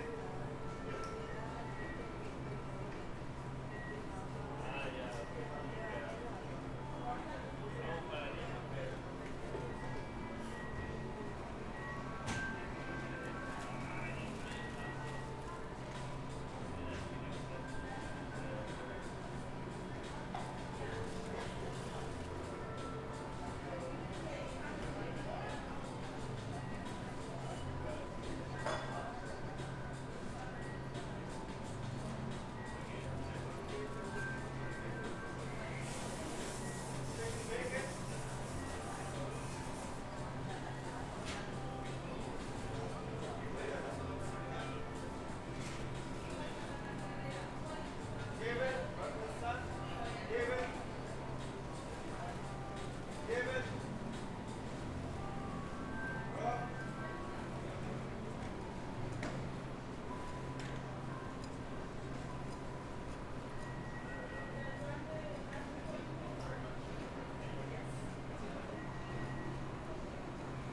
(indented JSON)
{
  "title": "Boston Logan Airport - Sitting Outside Starbucks",
  "date": "2022-05-24 04:18:00",
  "description": "Sitting outside the Starbucks in Terminal B. Starbucks was the only thing open at that early hour meanwhile a line started to form at the nearby Dunkin waiting for them to open",
  "latitude": "42.37",
  "longitude": "-71.02",
  "altitude": "9",
  "timezone": "America/New_York"
}